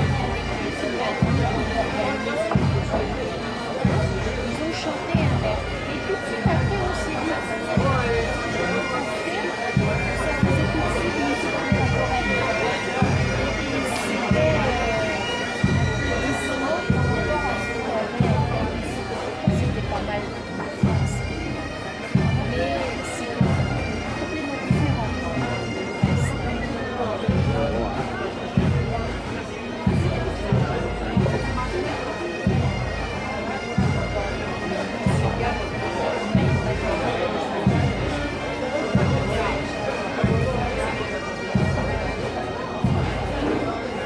Pl. Polig Montjarret, Lorient, França - Ambiance Festival Interceltique and beer
ENG: Ambience of a bar during the Festival Intercletique in Lorient (Britanny-France). While having a beer, a "bagad" passes by. Recorder: Samsung Galaxy S6 and Field Recorder 6.9.
FR: Ambiance dans un bar en plein air pendant le Festival Interceltique. Pendant la bière, un "bagad" passe par la place.
13 August 2021, 18:00